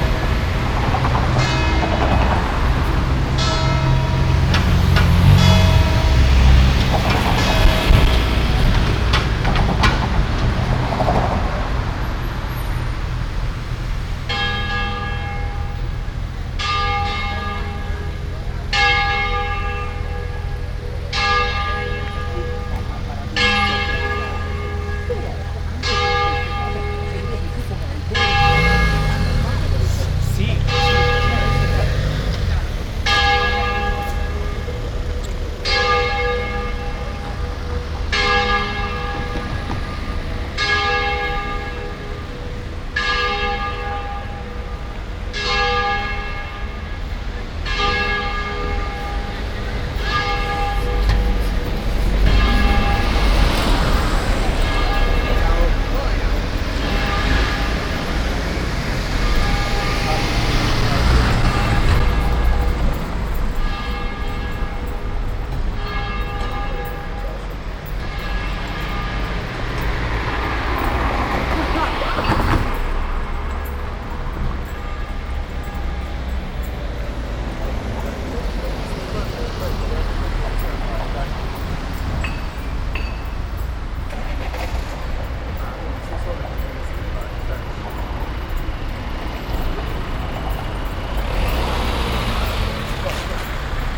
"Round Noon bells on September 8th, Tuesday in the time of COVID19" Soundwalk
Chapter CXXX of Ascolto il tuo cuore, città. I listen to your heart, city
Tuesday, September 8st, 2020, San Salvario district Turin, walking to Corso Vittorio Emanuele II and back, five months and twenty-nine days after the first soundwalk (March 10th) during the night of closure by the law of all the public places due to the epidemic of COVID19.
Start at 11:51 a.m. end at 00:17 p.m. duration of recording 25’46”
The entire path is associated with a synchronized GPS track recorded in the (kmz, kml, gpx) files downloadable here: